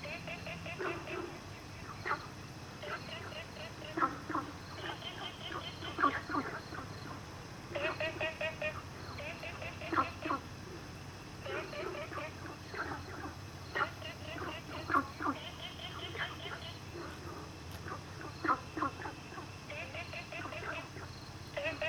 TaoMi River, Nantou County - Frogs sound
Frogs sound
Zoom H2n MS+XY